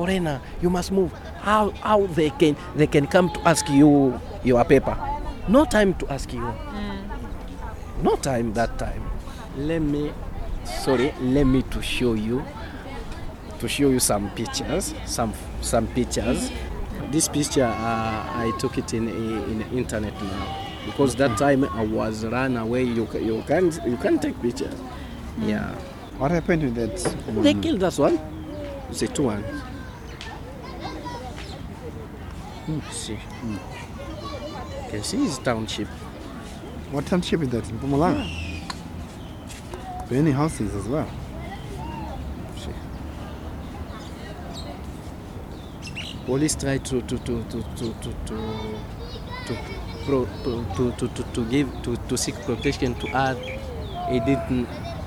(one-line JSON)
{"title": "Albert Park, Durban, South Africa - I'm an African....", "date": "2008-10-12 14:40:00", "description": "Delphine continues his story...", "latitude": "-29.86", "longitude": "31.01", "altitude": "16", "timezone": "Africa/Johannesburg"}